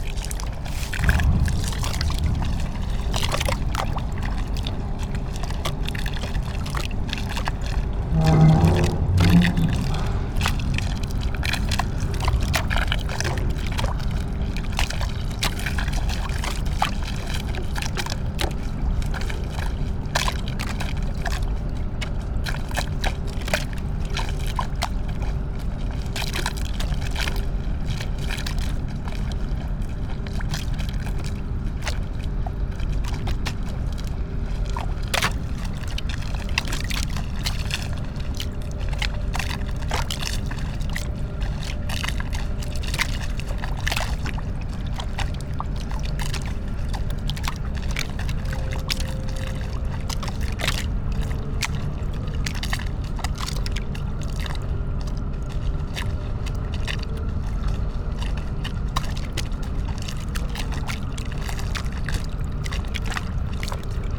{"title": "Plänterwald, Berlin, Germany - lapping waves, concrete wall, cement factory", "date": "2015-11-08 15:58:00", "description": "river Spree\nSonopoetic paths Berlin", "latitude": "52.49", "longitude": "13.49", "altitude": "37", "timezone": "Europe/Berlin"}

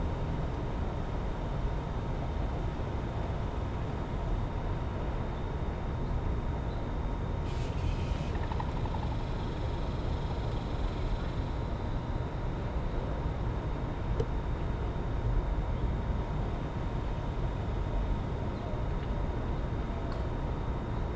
{"title": "Shoal Creek Greenbelt Trail, Austin, TX, USA - Shoal Creek at dusk", "date": "2018-07-18 19:35:00", "description": "On World Listening Day 2018, Phonography Austin hosted a soundwalk along Shoal Creek, an urban waterway. I left my recorder, a Tascam DR-22 with a Rycote Windjammer, behind, hidden in a bush, about three feet off of the creekbed, in a location that has enough foliage to dampen some of the urban drone.", "latitude": "30.27", "longitude": "-97.75", "altitude": "138", "timezone": "America/Chicago"}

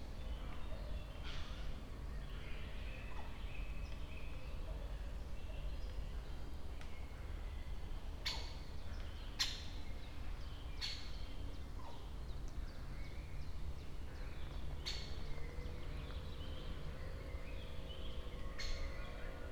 {"title": "dale, Piramida, Slovenia - nesting birds", "date": "2014-04-28 18:34:00", "latitude": "46.58", "longitude": "15.65", "altitude": "376", "timezone": "Europe/Ljubljana"}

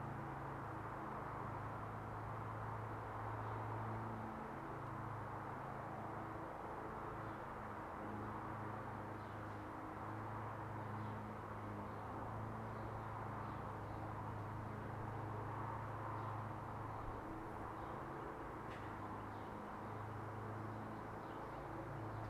{"title": "Galway, Ireland - Back garden, Seaman Drive", "date": "2015-05-09 16:25:00", "description": "Dogs barking, birds singing, lawnmowers, DIY and the nearby busy Galway-Headford road as heard from the back garden of a house I had been living in for three months and have since moved out of, in the quiet neighbourhood of Riverside. This estate was built on top of an old city dump, some of the houses (including mine) had suffered some major infrastructural damage due to land sinkage. Recorded with a Zoom H1 on the windowsill of my ground-floor window.", "latitude": "53.29", "longitude": "-9.03", "altitude": "16", "timezone": "Europe/Dublin"}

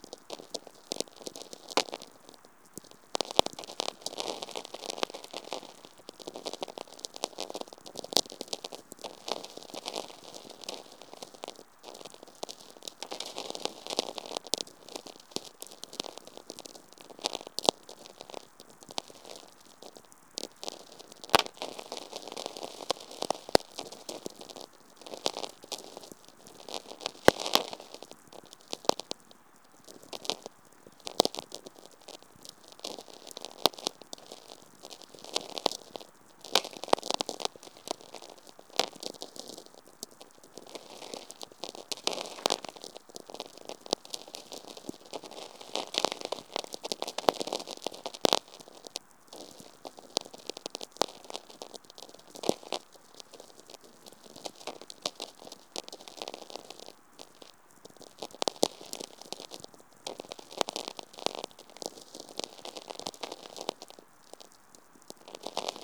{"title": "Kintai, Lithuania, VLF listening", "date": "2022-07-23 21:20:00", "description": "With VLF receiver on the shore of Curonian lagoon. Dark sky over Curonian Spit. Absolutely clear receiving without any interference.", "latitude": "55.42", "longitude": "21.25", "timezone": "Europe/Vilnius"}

